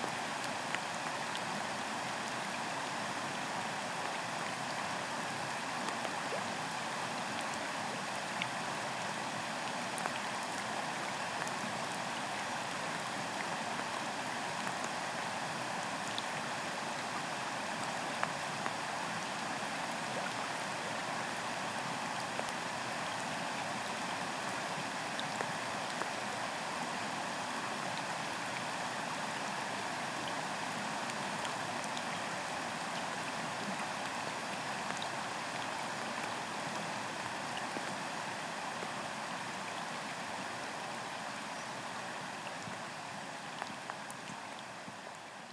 Mousebank Rd, Lanark, UK - Water Ferrics Recording 001: Mouse Water Drizzle
3-channel recording with a stereo pair of DPA 4060s and an Aquarian Audio H2a hydrophone into a Sound Devices MixPre-3.